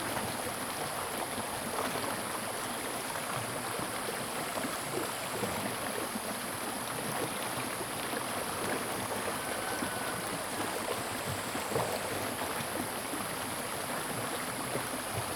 Chicken sounds, Brook
Zoom H2n MS+ XY